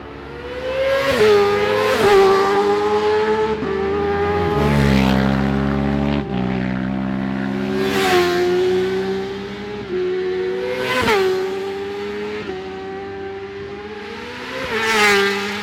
Jacksons Ln, Scarborough, UK - barry sheene classic 2009 ... practice ...